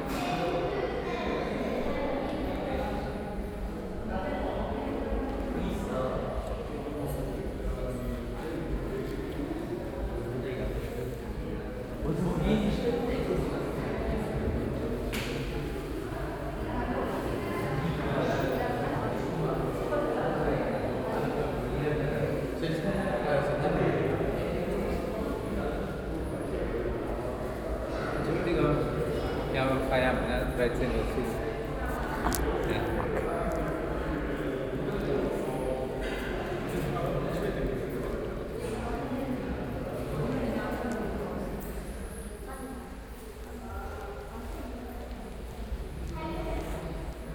We enter the temple through a small room where visitors are asked to please leave their shoes and all leather ware since it means insult to the goddess. Visitors may also wash their hands here. Inside the temple believers walk from shrine to shrine and in prayers many times around one shrine. Some families sit one the ground waiting patiently with their offerings of fruits and flowers for a priest to attend to them. The temple observes a lunch break; so we leave the temple with all other visitors and the doors are locked behind us.
“When it was completed and inaugurated on 7 July 2002, the Sri Kamadchi Ampal Temple in the city of Hamm (Westphalia) was the largest Dravida temple in Europe and the second largest Hindu temple in Europe after the Neasden Temple in London, which was built in the North Indian Nagara style. It is the only temple of the goddess Kamakshi outside India or South Asia.”